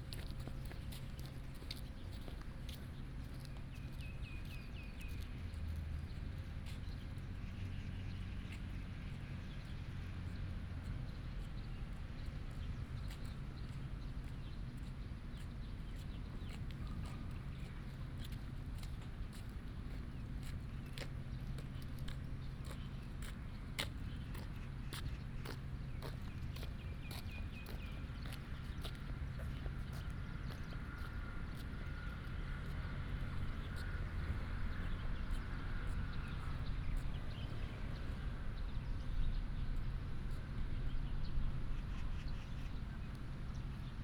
空軍十二村, Hsinchu City - Footsteps
in the park, Birds sound, Footsteps, Formerly from the Chinese army moved to Taiwans residence, Binaural recordings, Sony PCM D100+ Soundman OKM II